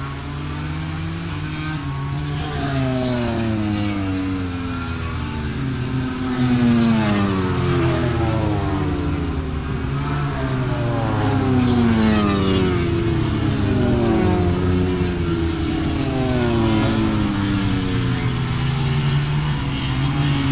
Lillingstone Dayrell with Luffield Abbey, UK - british motorcycle grand prix 2016 ... moto grand prix ...
moto grand prix free practice ... Vale ... Silverstone ... open lavalier mics clipped to clothes pegs fastened to sandwich box on collapsible chair ... umbrella keeping the rain off ... very wet ... associated sounds ... rain on umbrella ...
Towcester, UK